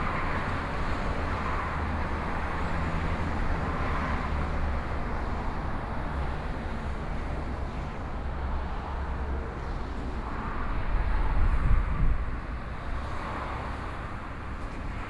壯圍鄉美福村, Yilan County - Traffic Sound
Below the freeway lanes, Traffic Sound
Sony PCM D50+ Soundman OKM II